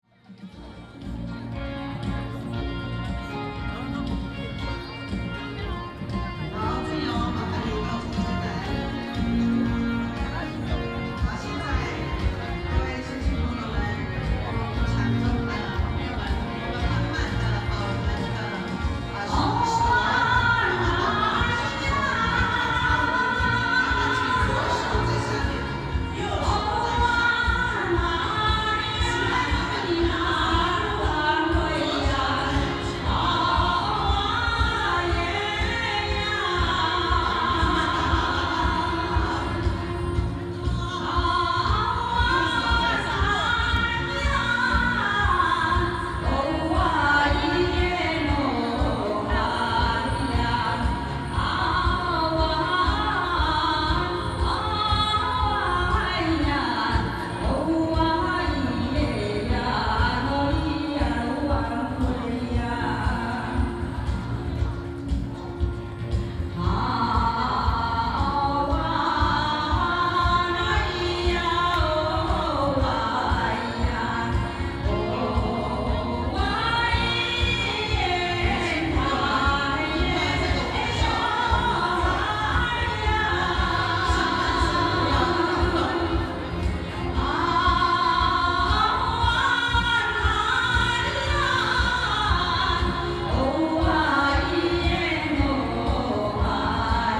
A dance before a traditional tribal wedding, Paiwan people, Many people participate in dancing